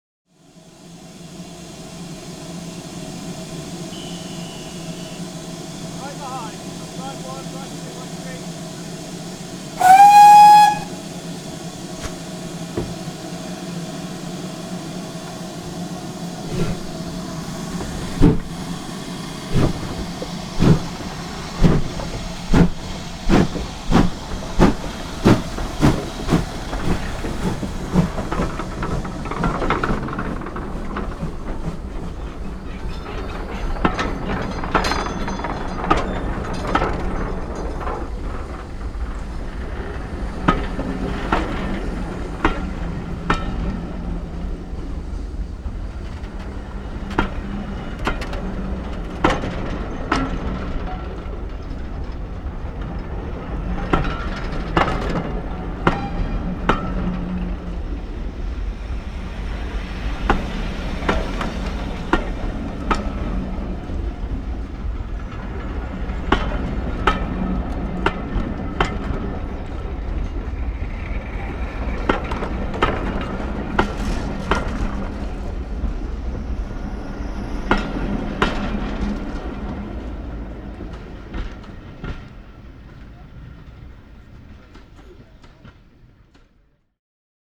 Whistle, steam and wheels, Toddington Station, Gloucestershire, UK - Steam Train
On the Gloucestershire and Warwickshire Railway at Toddington a steam train leaves. Voices, whistle, engine and bogies from close up. MixPre 3 with 2 x Beyer Lavaliers + Rode NTG3.